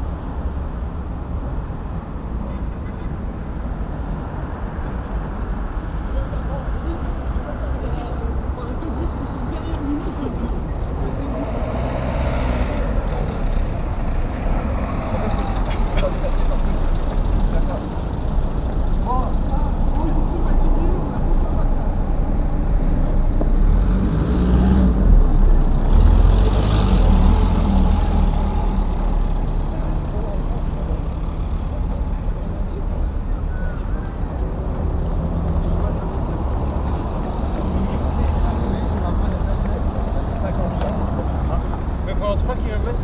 Gosselies, Rue Clément Ader, company race
People running near the airport.
Charleroi, Belgium, 21 October 2011